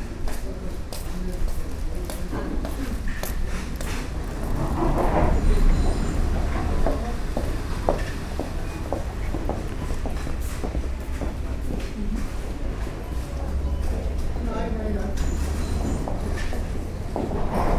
Outside the Supermarket, Great Malvern, Worcestershire, UK - Supermarket
A typical day outside the supermarket. Shopping trollies, people, sliding doors of the supermarket, distant sounds of the large car park.
MixPre 6 II with 2 x Sennheiser MKH 8020s
9 November 2019, 10:42